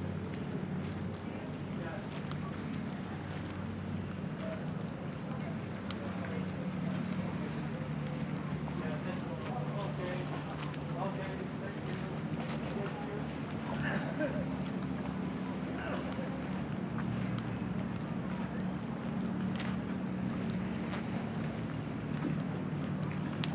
church bells between tiny streets